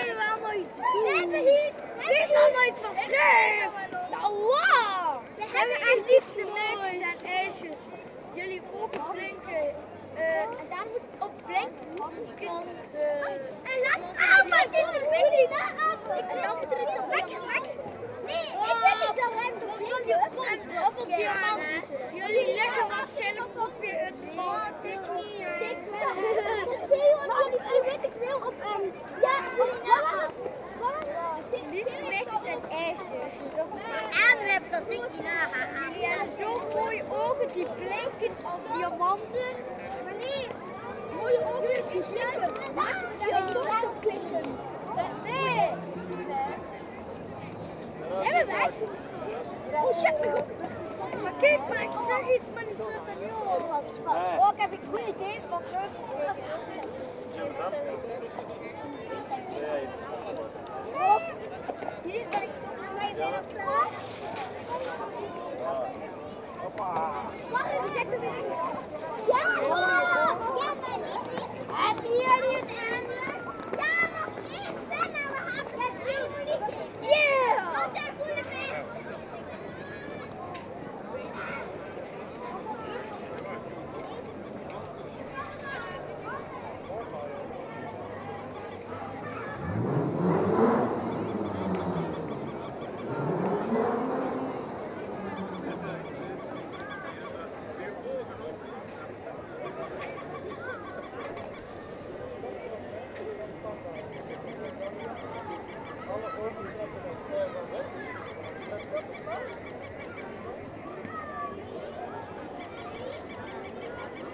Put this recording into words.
Boy scouts playing on the wide main square of the town, a local market and Peruvian people selling rubbish.